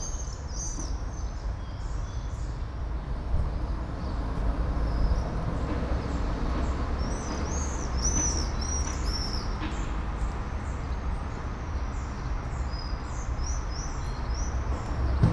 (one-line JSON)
{"title": "all the mornings of the ... - may 14 2013 tue", "date": "2013-05-14 06:52:00", "latitude": "46.56", "longitude": "15.65", "altitude": "285", "timezone": "Europe/Ljubljana"}